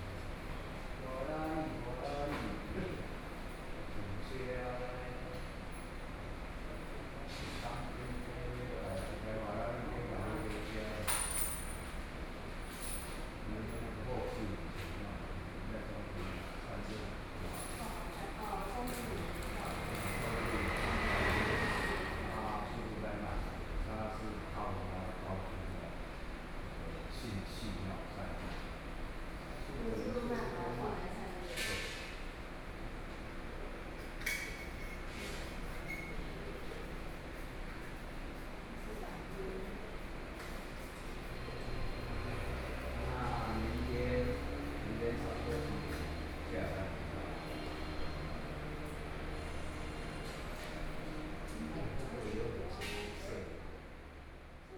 In the station lobby, small station